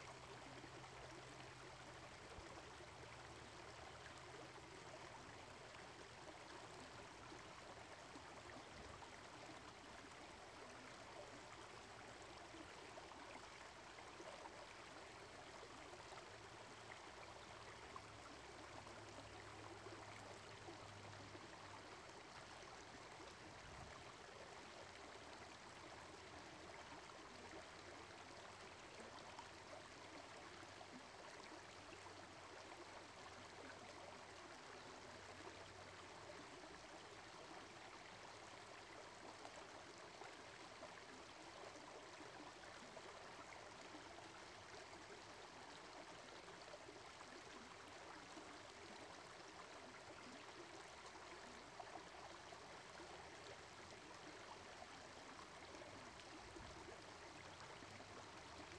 Leona Heights park creek, towards the end of trail ---- Oakland
flat part towards the end of trail in Leona canyon, water just slowly floats down, before it gains the speed